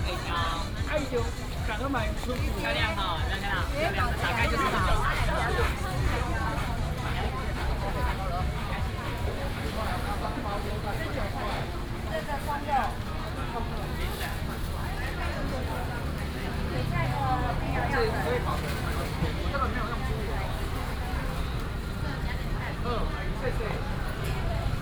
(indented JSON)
{
  "title": "Xinyuan St., East Dist., Hsinchu City - Outdoor traditional market",
  "date": "2017-08-26 09:21:00",
  "description": "in the traditional market, vendors peddling, Outdoor traditional market, Binaural recordings, Sony PCM D100+ Soundman OKM II",
  "latitude": "24.80",
  "longitude": "120.99",
  "altitude": "43",
  "timezone": "Asia/Taipei"
}